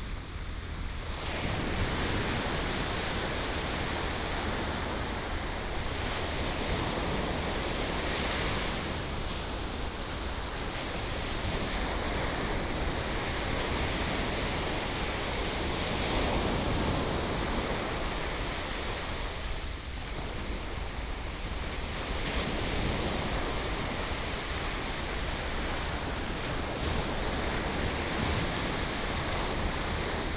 Tamsui Fisherman's Wharf, New Taipei City - On the beach
On the beach, Sound of the waves
New Taipei City, Taiwan, January 2017